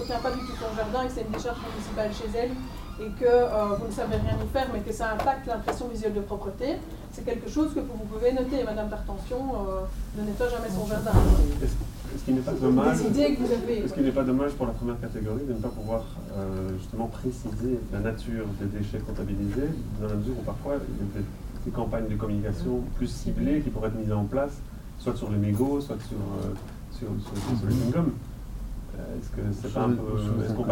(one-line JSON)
{"title": "Mont-Saint-Guibert, Belgique - Formation at the IBW center", "date": "2016-02-25 11:00:00", "description": "A training about the \"Clic-4-Wapp\" project, from the Minister Carlo Di Antonio. The aim is to evaluate the state of dirt of the Walloon municipalities. The teacher explains how to count dirts in the streets, where and why. The teacher is Lorraine Guilleaume.", "latitude": "50.65", "longitude": "4.63", "altitude": "130", "timezone": "Europe/Brussels"}